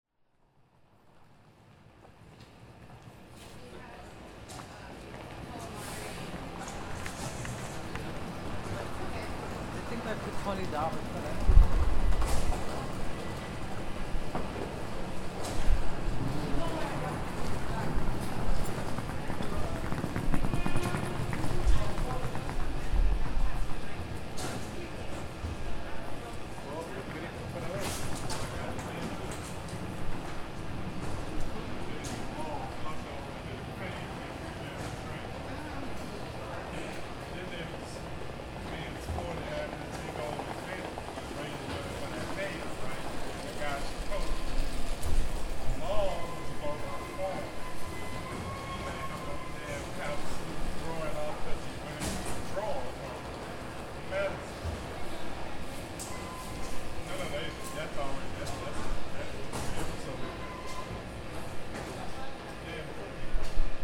North Capitol Street, Washington, DC, USA - Union Station Metro
Down in the metro station at Union Station.
Thursday afternoon.